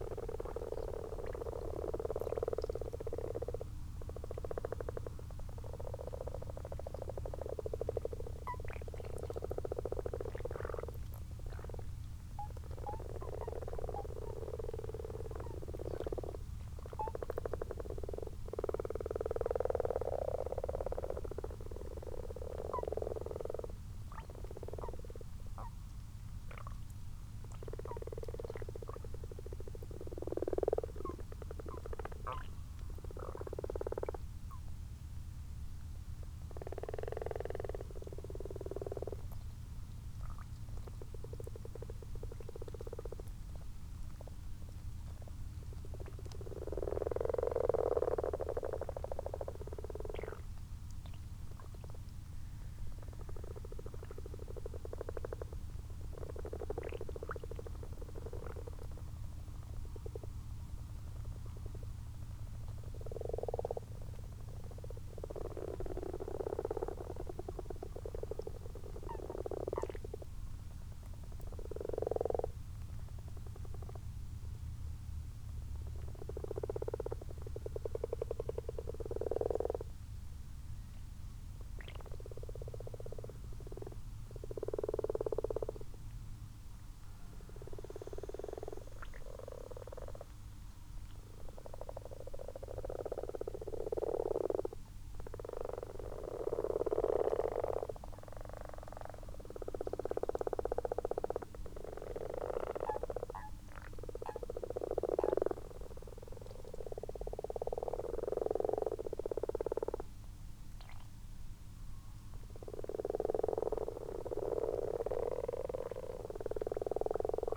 Malton, UK - frogs and toads ...
common frogs and common toads in a garden pond ... xlr sass on tripod to zoom h5 ... time edited extended unattended recording ...
21 March 2022, ~03:00